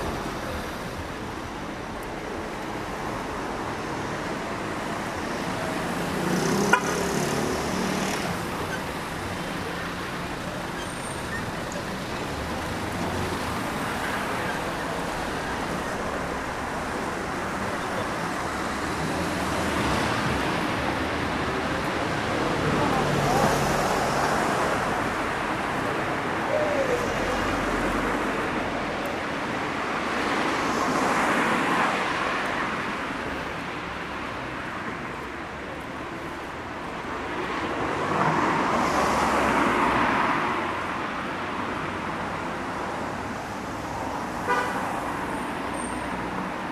Fullmoon Nachtspaziergang Part X
Fullmoon on Istanbul, descending towards Osmanbey